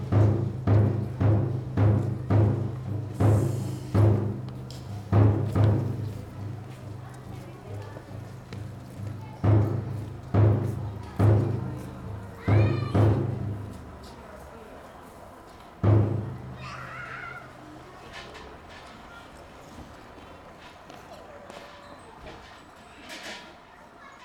Jōnai, Kokurakita Ward, Kitakyushu, Fukuoka, Japan - New Year's Day Prayers Before Yasaka Shrine
New Year's Day Prayers Before Yasaka Shrine
福岡県, 日本, 1 January 2019